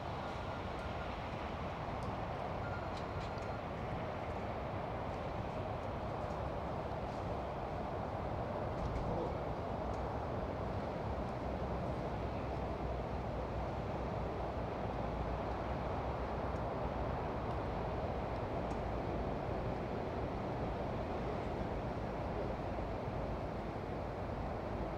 Aire de Bois d'Arsy, Autoroute du Nord, Remy, France - Ambience along the highway
Tech Note : Sony PCM-M10 internal microphones.
France métropolitaine, France, 12 August